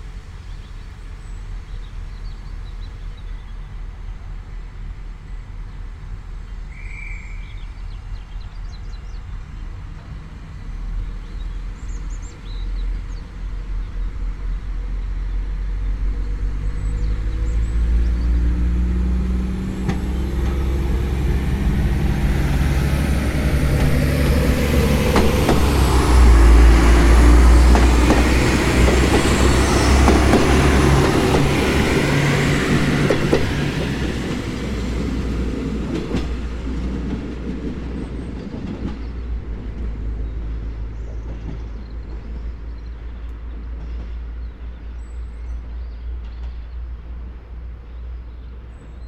small train from Poznan, Skoki Poland
small diesel train from Poznan arriving to the platform in Skoki